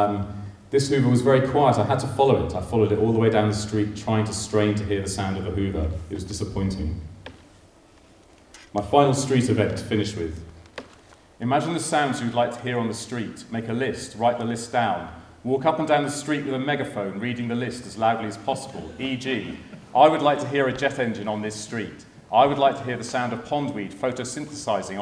UCL, Garraun, Co. Clare, Ireland - Talking about Roads at the Urban Soundscapes & Critical Citizenship conference, March 2014
You can hear all the banter and talking and setting up at the start, but at about 6 minutes in, there is the joint presentation given by myself and Paul Whitty at the Urban Soundscapes & Critical Citizenship conference, March 2014; we are talking about listening to the street, and how it relates to different sonic practices. Many sounds discussed in the presentation are elsewhere on aporee...